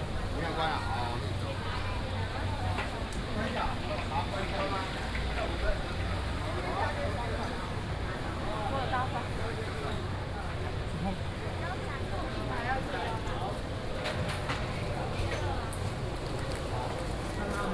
Aly., Lane, Tonghua St. - Walking in a small alley
Walking in a small alley, Traffic noise, Various shops
Taipei City, Taiwan, June 2015